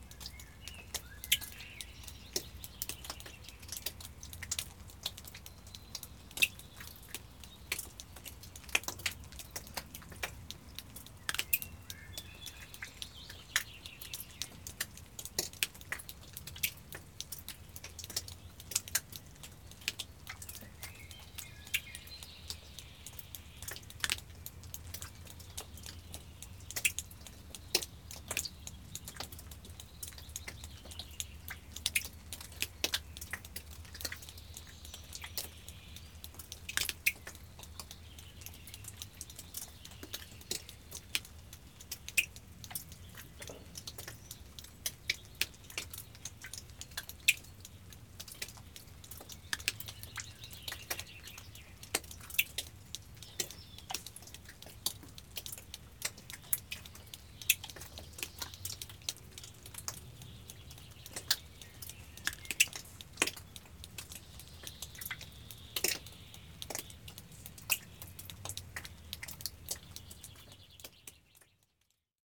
Soviet missile silo Viimsi, water drips
water drips from the roof of a former Soviet bunker in Viimsi near Tallinn
Harjumaa, Estonia, 17 May 2010, 21:04